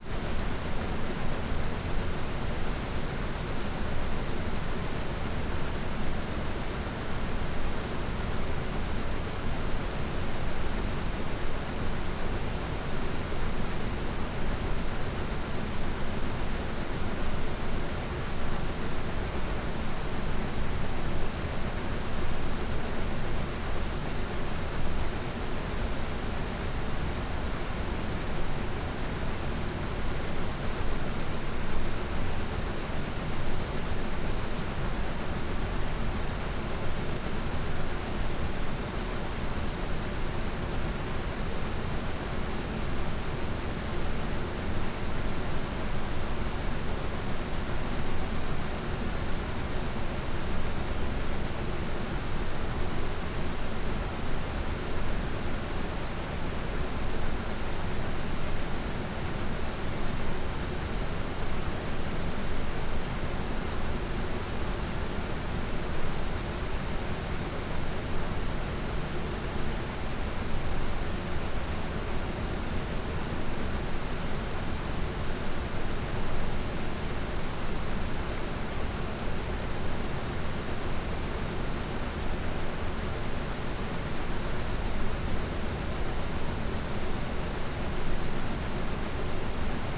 Kiekebuscher Spreewehr, Cottbus - river Spree weir noise and rythmic pattern
weir noise at the river Spree, the construction and water flow create a rythmic pattern
(Sony PCM D50, Primo EM172)
Cottbus - Chóśebuz, Brandenburg, Deutschland, 24 August 2019